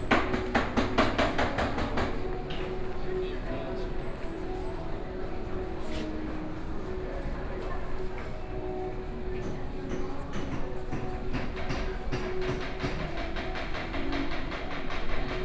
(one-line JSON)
{"title": "ESLITE SPECTRUM SONGYAN STORE - soundwalk", "date": "2013-09-10 14:26:00", "description": "ESLITE SPECTRUM SONGYAN STORE, Sony PCM D50 + Soundman OKM II", "latitude": "25.04", "longitude": "121.56", "altitude": "9", "timezone": "Asia/Taipei"}